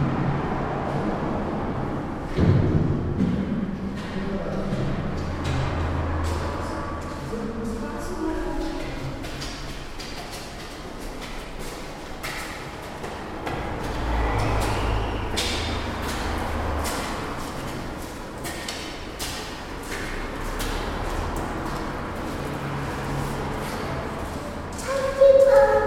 on the entrance of the corridor from the part towards the steet trafic. Favourite sounds of Prague